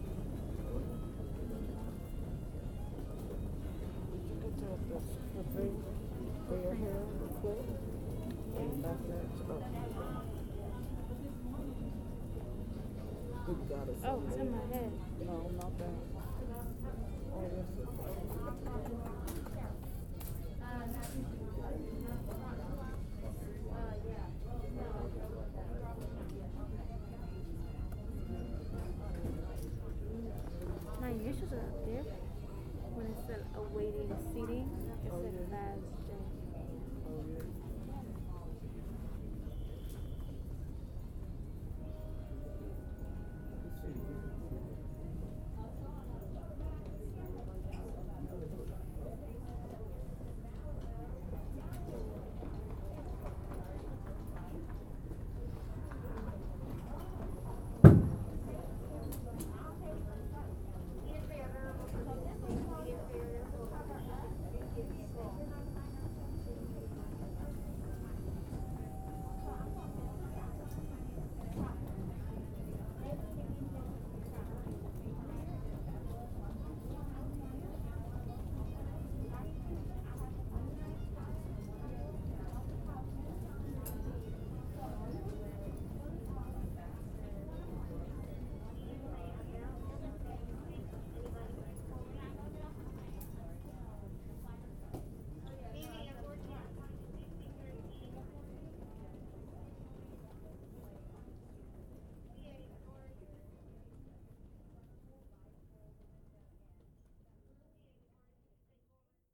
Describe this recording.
Waiting for a flight at gate D16 of the Hartsfield-Jackson Atlanta International Airport. You can hear all the typical airport sounds: lots of people walking back and forth, rolling suitcases, and various PA announcements from the surrounding gates. This audio was captured with a special application that allows the user to disable all noise reduction and processing on the stock microphones of various android devices. The device used to capture the audio was a Moto G7 Play, and the resulting audio is surprisingly clear and lifelike. EQ was done in post to reduce some treble frequencies.